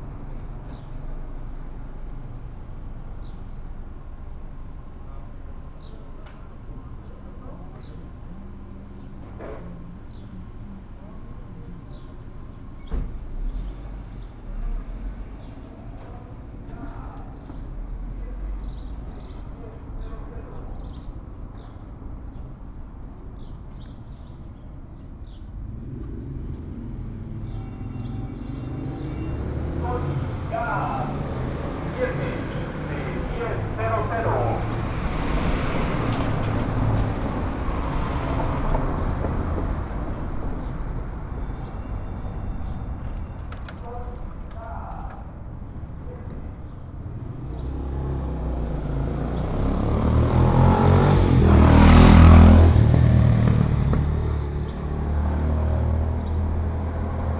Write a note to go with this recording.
Pedro Moreno Street, in front of the El Mezquitito Temple during the first day of phase 3 of COVID-19. (I stopped to record while going for some medicine.) I made this recording on April 21st, 2020, at 2:36 p.m. I used a Tascam DR-05X with its built-in microphones and a Tascam WS-11 windshield. Original Recording: Type: Stereo, Esta grabación la hice el 21 de abril 2020 a las 14:36 horas.